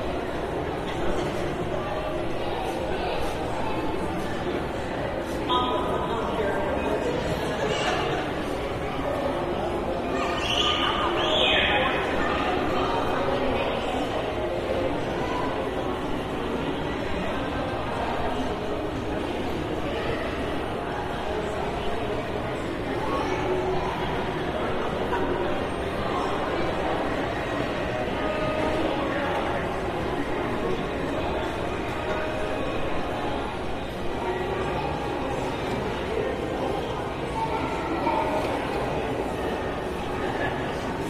{"title": "St. Matthews, Louisville, KY, USA - Consuming", "date": "2013-11-13 15:00:00", "description": "Next to rides for children inside of a shopping mall. Shoppers passed by and children played nearby.\nRecorded on a Zoom H4n.", "latitude": "38.25", "longitude": "-85.61", "altitude": "169", "timezone": "America/Kentucky/Louisville"}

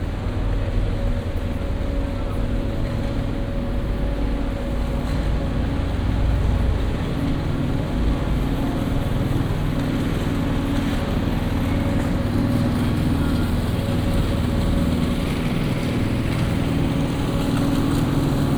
{"title": "Place de l'Université, Aix-en-Provence - early evening ambience", "date": "2014-01-06 17:30:00", "description": "ambience at Place de l'Université on a Monday early evening\n(PCM D50, OKM2)", "latitude": "43.53", "longitude": "5.45", "altitude": "214", "timezone": "Europe/Paris"}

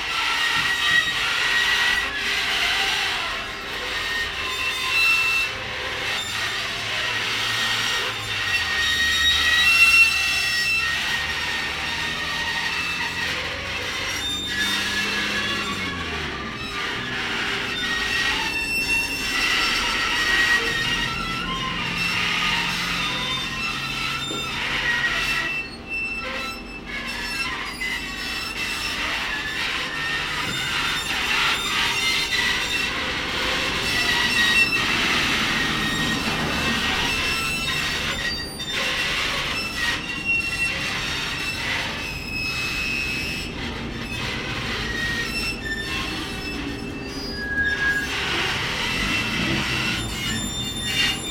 {"title": "Yerevan, Arménie - Merzbow like billboard", "date": "2018-09-02 09:50:00", "description": "On the big Sayat Nova avenue, there's a billboard. As it's ramshackle, it produces some Merzbow like music. Not especially an ASMR sound !", "latitude": "40.19", "longitude": "44.52", "altitude": "1011", "timezone": "Asia/Yerevan"}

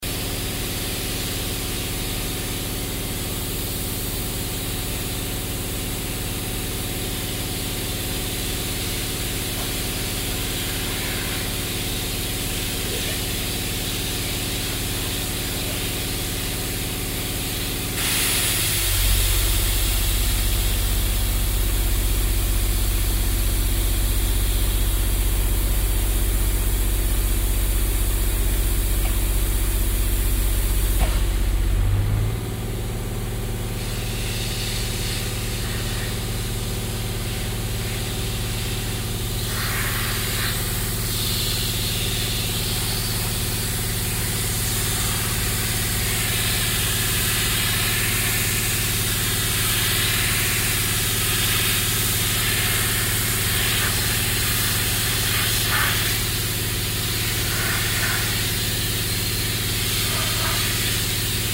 {"title": "cologne, south, friedenspark, anti sprayer aktion - cologne, sued, friedenspark, anti sprayer aktion", "date": "2008-05-21 16:12:00", "description": "wasserdruckanlage und generatorgeräusche bei der entfernung von graffitis an der burgmauer\nsoundmap: cologne/nrw\nproject: social ambiences/ listen to the people - in & outdoor nearfield recordings", "latitude": "50.92", "longitude": "6.97", "altitude": "48", "timezone": "Europe/Berlin"}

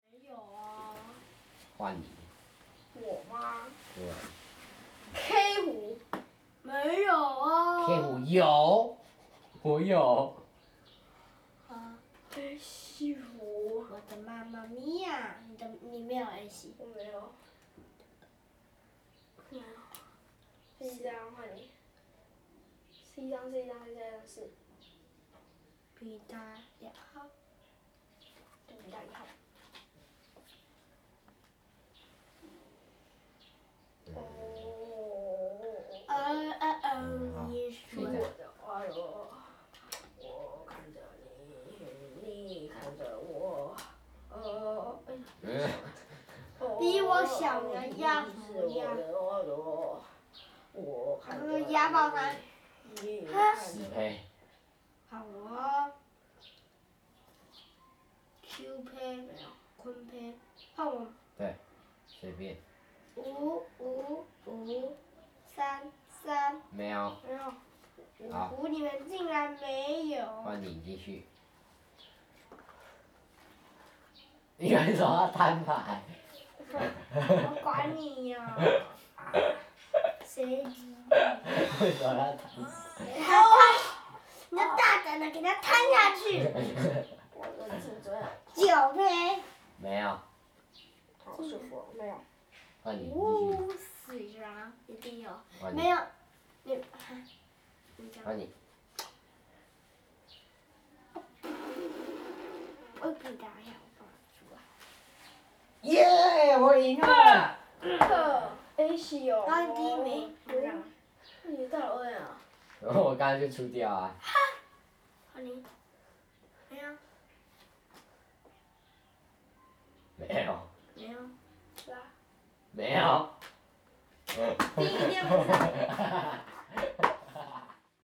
{"title": "Fanshucuo, Shuilin Township - play cards", "date": "2016-02-08 14:10:00", "description": "Kids playing cards\nZoom H2n MS +XY", "latitude": "23.54", "longitude": "120.22", "altitude": "6", "timezone": "Asia/Taipei"}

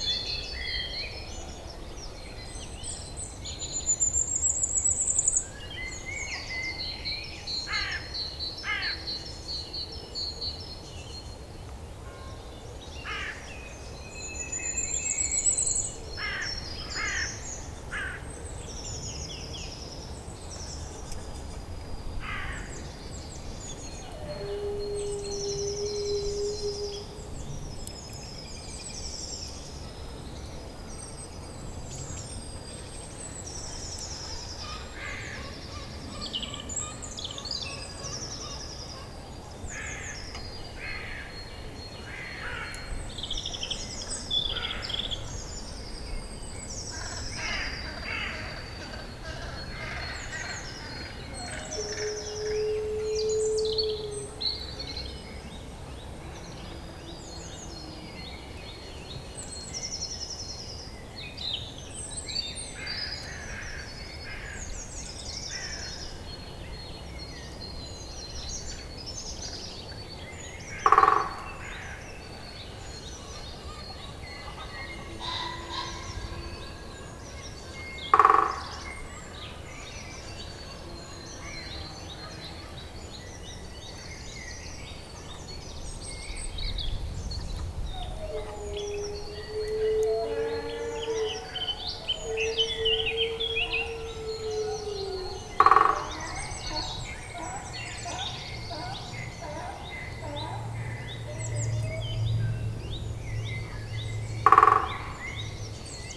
{"title": "Court-St.-Étienne, Belgique - Woodpecker", "date": "2016-04-12 13:00:00", "description": "A European green woodpecker strikes a tree. In a typical rural landscape of Belgium, pheasant screams, common chiffchaff screams and a donkey screams (in fact near everybody scream in the woods ^^). Also, you can hear periodically a wolf ! It's a dog, finding time so long alone...", "latitude": "50.61", "longitude": "4.53", "altitude": "90", "timezone": "Europe/Brussels"}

